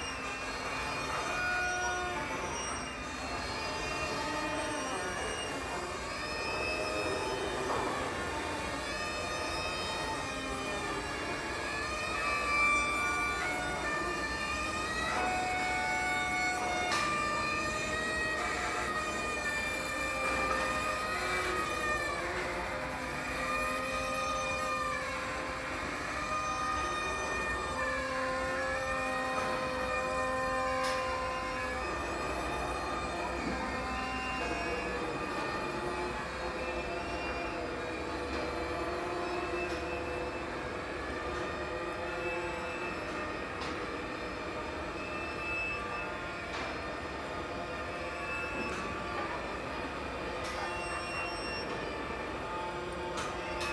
Symphony of Groans, Spreepark abandonned fun fair
Ghosts in the former East Berlin fun fair now derelict and overgrown. The magnificent and colourful big wheel is turning. How I don know - maybe wind, maybe a test of old machinery. The whole ground is private and enclosed but there are inviting holes in the fencing
Berlin, Germany, 10 December 2011, 13:45